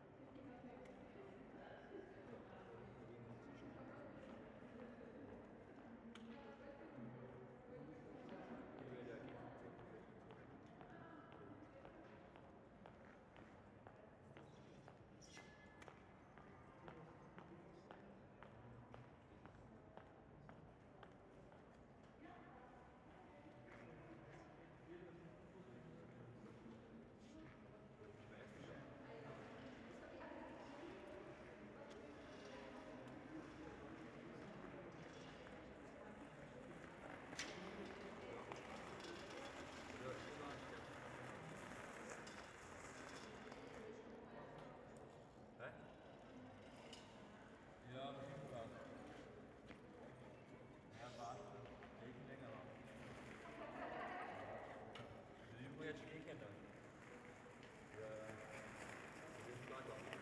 Uni, Salzburg, Österreich - In einem Torbogen
Stimmen, Schritte, Passage eines Velos. Jemand telefoniert.
Salzburg, Austria, 17 April 2007